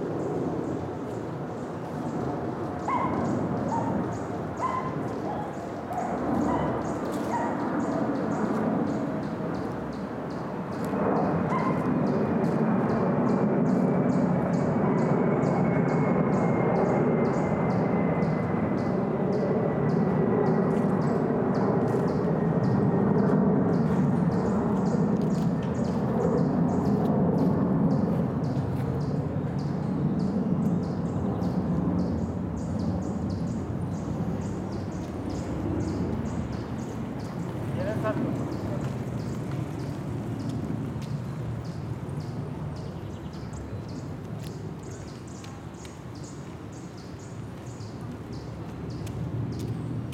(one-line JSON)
{"title": "Cra., Bogotá, Colombia - Sidewalk path in North Bogota", "date": "2021-05-18 05:00:00", "description": "This place is a sidewalk path in a high-income neighborhood, located in the north of Bogotá. This place has a partially crowded environment where you can hear people walking, birds, a dog, children playing in the distance and a plane.\nThis plane is noisy, you can also hear in the distance some vehicles passing. The audio was recorded in the afternoon, specifically at 5 pm. The recorder that we used was a Zoom H6 with a stereo microphone and a xy technique.", "latitude": "4.69", "longitude": "-74.04", "altitude": "2560", "timezone": "America/Bogota"}